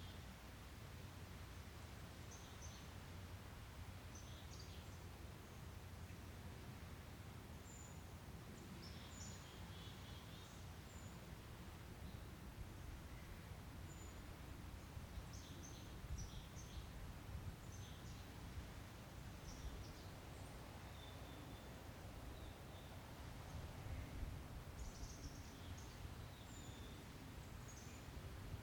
At Picnic Point in north Edmonds, there's a pedestrian walkway to the beach over the tracks that run along the waterfront, but there's no at-grade vehicle crossing, so the trains don't have to slow down or even blow their whistles as they zoom past. This short, short freight train -- about a dozen cars -- can be heard blowing its horn a couple miles down the tracks at the nearest grade crossing, and then nothing -- until suddenly it bursts around the corner, wheels singing at full volume as the tracks curve sharply around the point. Just as suddenly the train has passed, and gently recedes into the distance.
21 July 2019, ~10:00